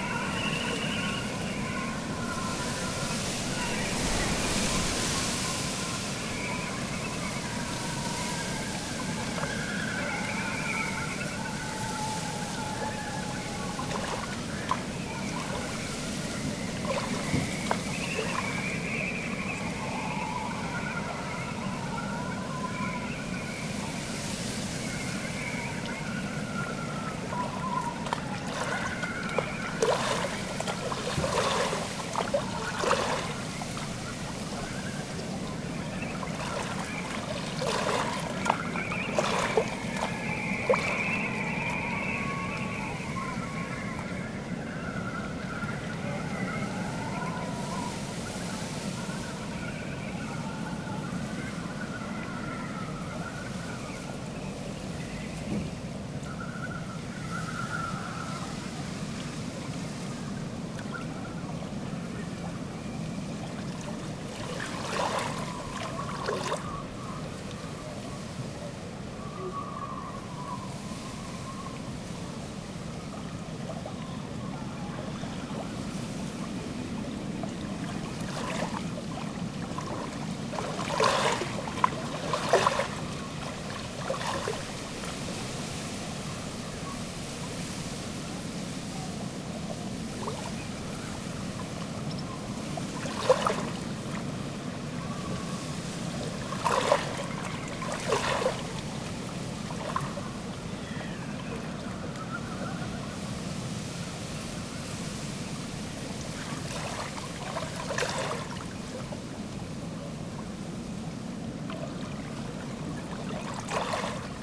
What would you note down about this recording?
stormy late afternoon, wind whistles through the rigging of ships, the city, the country & me: june 13, 2015